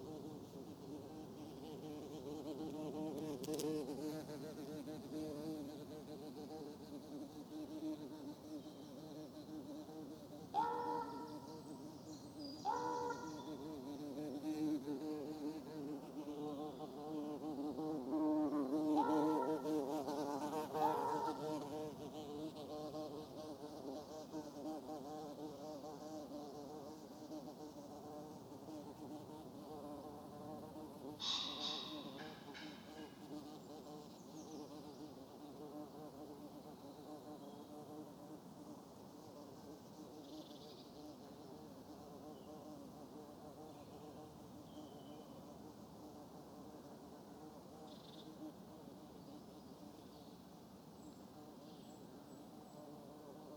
Warburg Nature Reserve, Bix UK - Raven and Bumble Bee
A beautiful spring morning, a gentle breeze blowing in the tops of the trees, with the early Brimstone butterflies making their way through the landscape. The Ravens are nesting nearby and one makes unusual calls from a tall fir. A Bumble bee investigates the microphone setup and passes on by....Sony M10 with small homemade Boundary array.